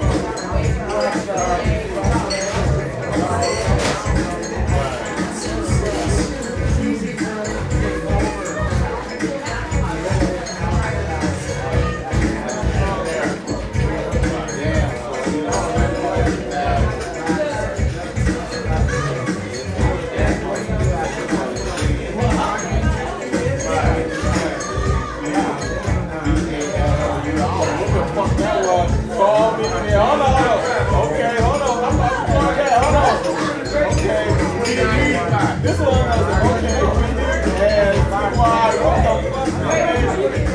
Downtown, Detroit, MI, USA - sweetwater tavern
sweetwater tavern, 400 e congress st, detroit, mi 48226